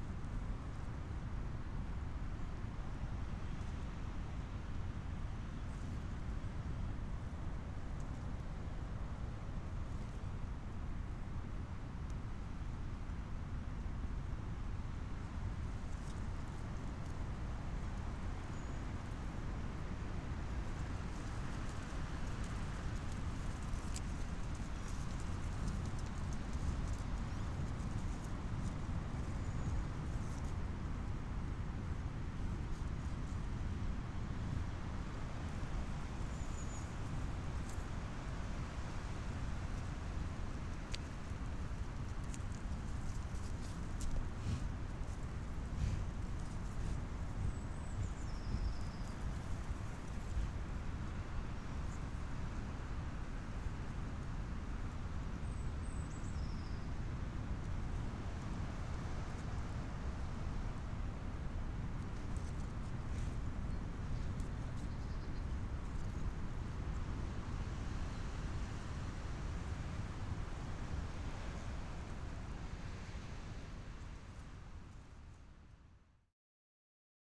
Pasiliai, Lithuania, breathing of european bison
the biggest wild animal in Europe: european bison. the beast is sniffing my microphones
Panevėžio apskritis, Lietuva, 14 March 2020, 13:15